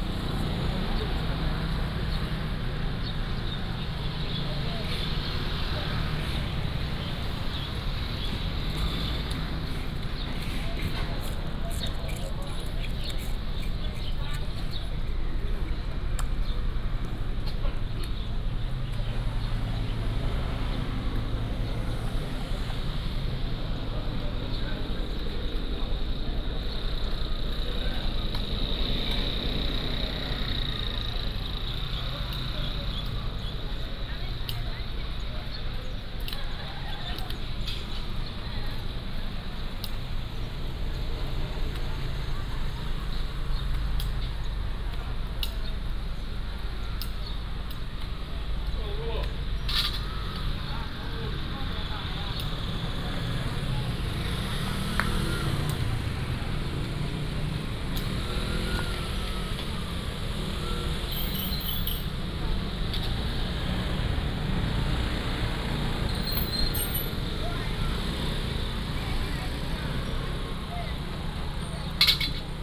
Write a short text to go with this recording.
Fishmonger, Scrape off scales, The sound of birds, Traffic sound, Vendors